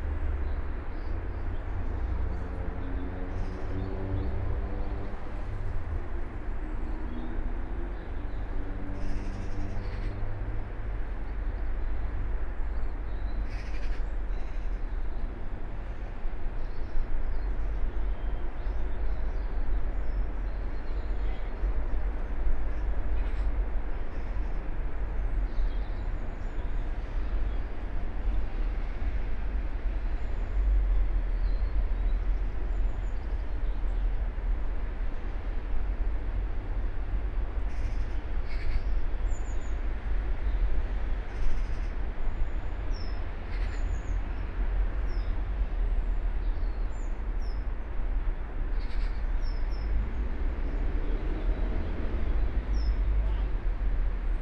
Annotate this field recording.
Ten minute ambience of Reading Buddhist Priory's garden (Spaced pair of Sennheiser 8020s + SD MixPre6)